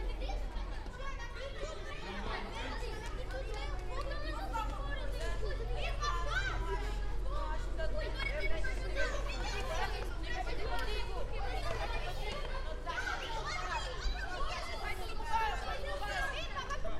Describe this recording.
Kids playing football in front of church, cars and motorcycles passing by, people chatting. Recorded with a SD mixpre6 with a a pair of 172 primos clippy (omni mics) in AB stereo setup.